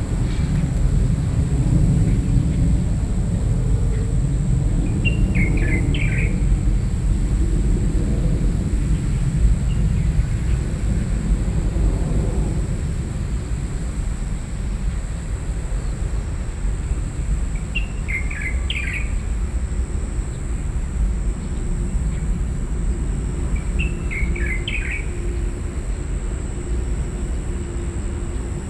行天宮, Beitou District, Taipei City - birds, Aircraft flying through